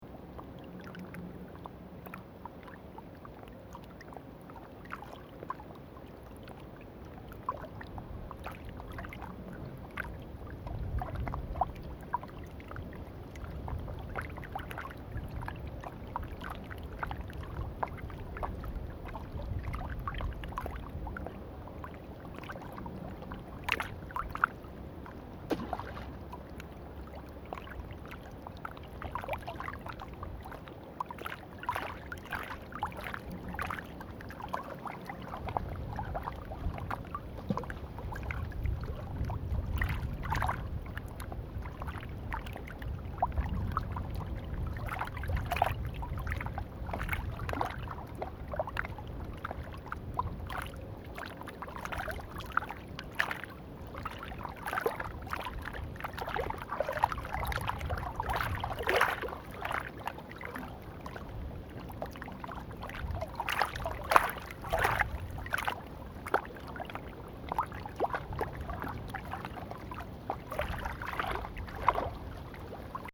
Miranda do Douro, Portugal Mapa Sonoro do Rio Douro. Douro River Sound Map